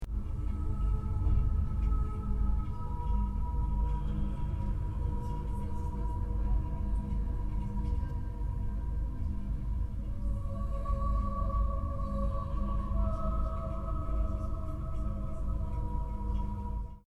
Teufelsberg, Top Romantic Song - Top Romantic Song
She sings like one immortal
(Teufelsberg, Luisa, Binaurals)